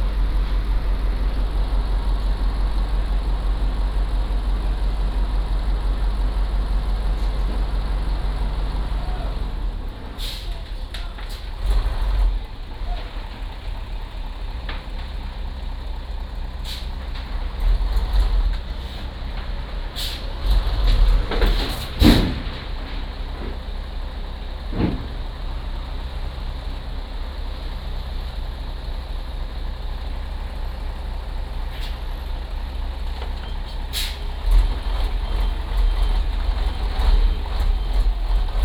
Traffic Sound, The station is being renovated